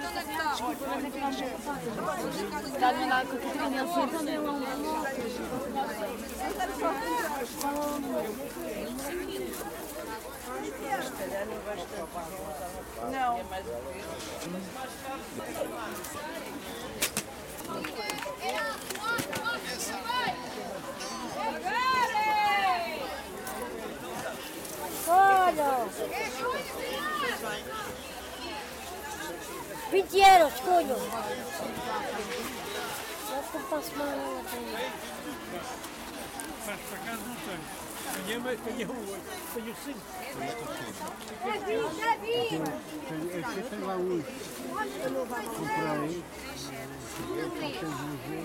Feira Carcavelos, Portugal - busy morning in flea market
It´s a busy Thursday morning selling clothes and goodies.
Wandering around the area.
Recorded with Zoom H6.
April 2017